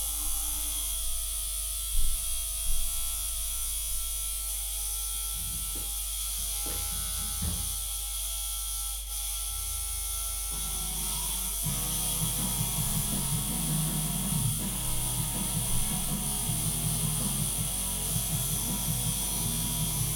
Prospect Rd, Scarborough, UK - having a tattoo ...
having a tattoo ... tough love tattoo studio ... preliminaries ... tattooing ... discussion about after care ... dpa 4060s clipped to bag to zoom h5 ... tattoo of midway atoll with a laysan albatross in full sky moo mode ... and two birds silhouette in flight ... and music ...